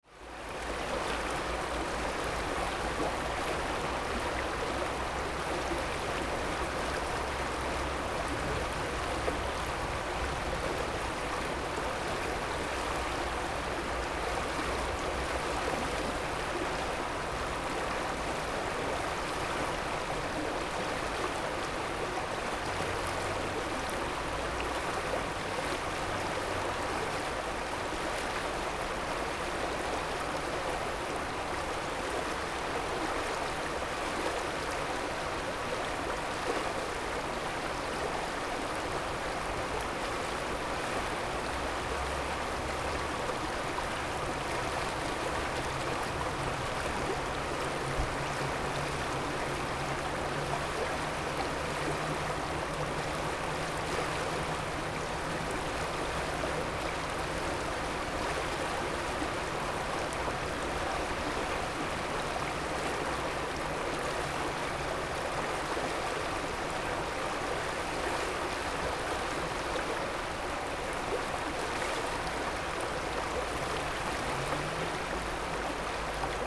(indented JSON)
{
  "title": "Latvia, Bauskas, river Memele near Bauskas castle",
  "date": "2010-09-12 13:50:00",
  "description": "river Memele near Bauskas castle",
  "latitude": "56.40",
  "longitude": "24.18",
  "altitude": "14",
  "timezone": "Europe/Riga"
}